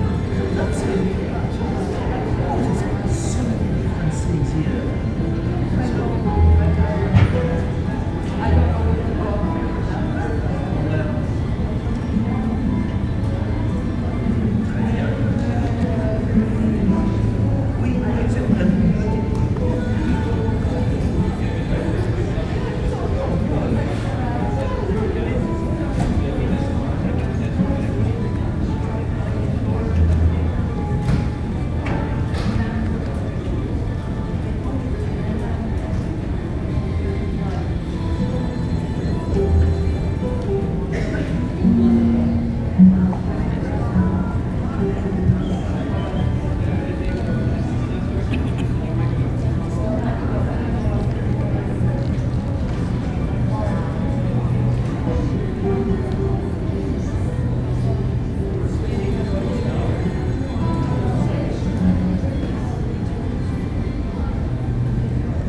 The Lobby of a Liner, North Atlantic Ocean. - Lobby
The main lobby of a liner, the pursers office, the tour office, double staircase two decks high, ceiling four decks high, grand, smart and the social meeting place on the ship. The casino, shops without price tags and the ship's bell are present. You are greeted here when you board the ship and directed to the lifts just along the main concourse. Sometimes a string quartet, pianist or harpist plays. A jumble sale is held on the concourse every week and is packed.
MixPre 3 with 2 x Beyer Lavaliers.